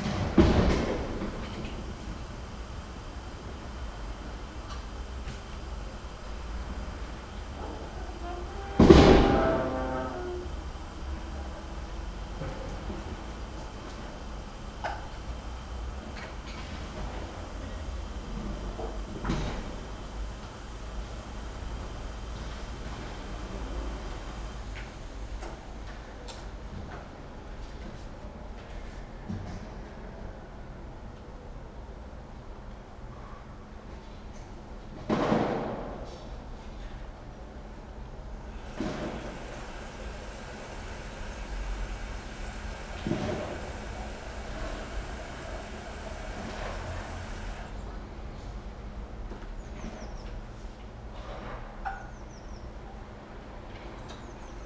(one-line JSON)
{"title": "from/behind window, Mladinska, Maribor, Slovenia - pigeon, construction workers, auto and radio, me", "date": "2013-09-27 07:47:00", "description": "morning times, late september 2013", "latitude": "46.56", "longitude": "15.65", "altitude": "285", "timezone": "Europe/Ljubljana"}